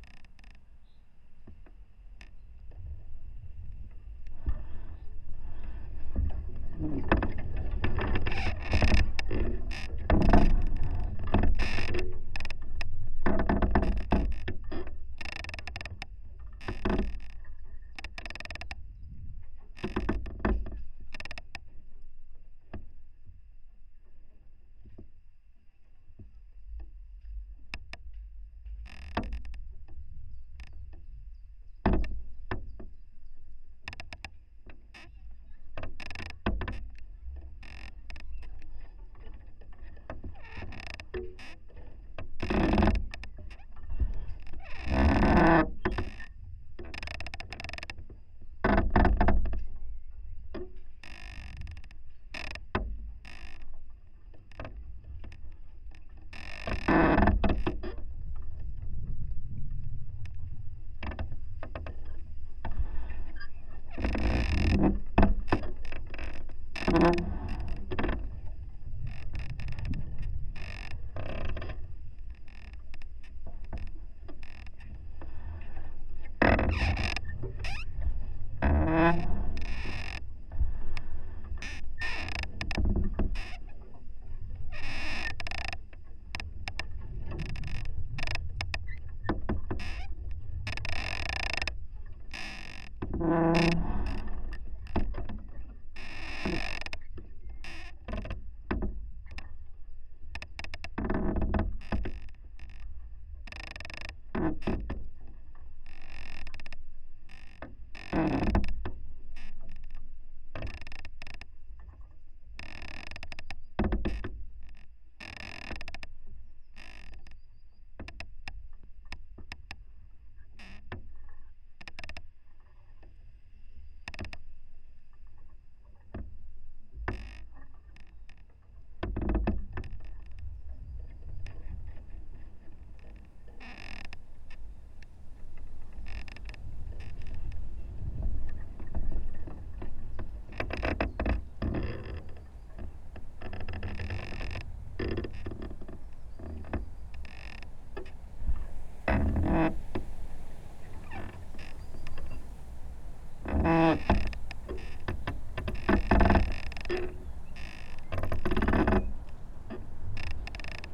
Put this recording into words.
A dead tree moving against another in the wind created unpredictable rhythms and patterns of creaks. The internal sounds can be heard by putting your ear on the trunk and were recorded with a contact mic. The external sounds were also recorded in sync. This track is mix that moves from the outside to the inside of the tree and back. Inside the wind blowing through the tree top creates lovely, but quiet, bass frequencies. Outside a chiffchaff calls nearby and later a blackbird sings.